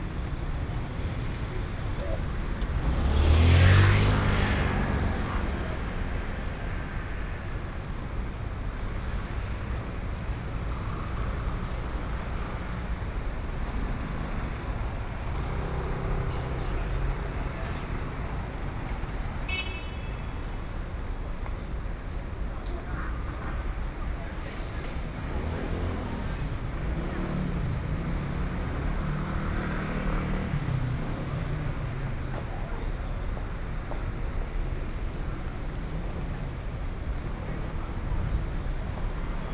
Neihu, Taipei - Walk
walking in the Street, Sony PCM D50 + Soundman OKM II